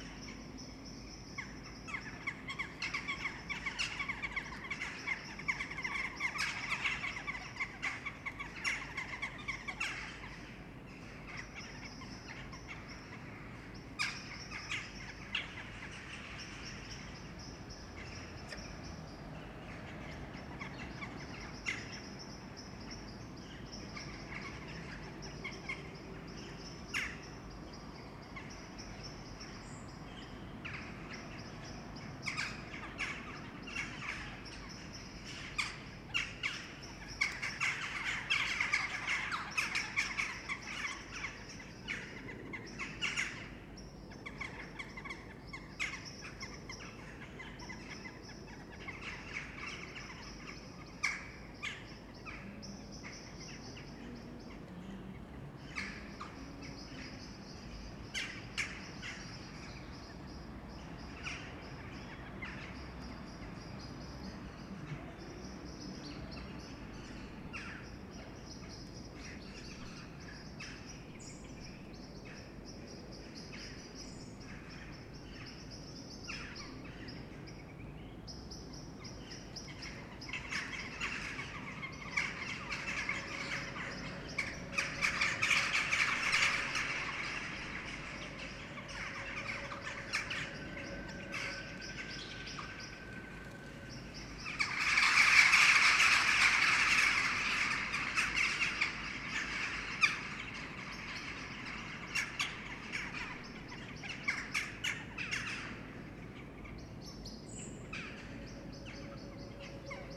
This is the daily ritual of Jackdaws gathering before going to sleep.
22 March 2015, ~19:00